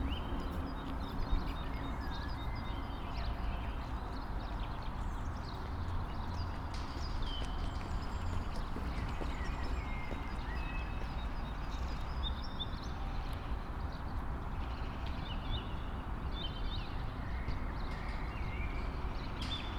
Wolfgang-Heinz-Straße, Berlin-Buch - waste land, between houses, bird, echos
(Sony PCM D50, DPA4060)
Berlin, Germany, 27 March 2019, 08:35